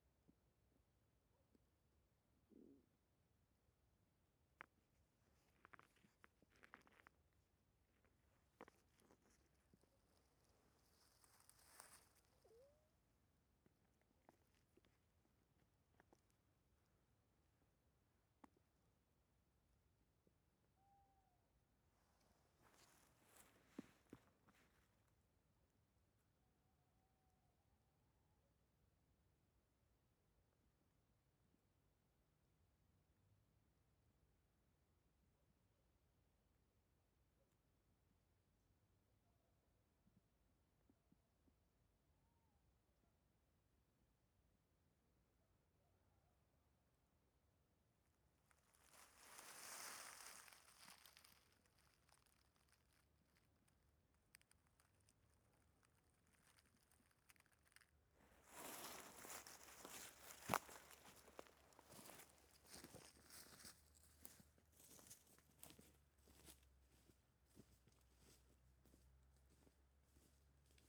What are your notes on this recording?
soundscape composition for a dear friend. 2012.04.04, sounddevice 722, 2 x km 184 / AB.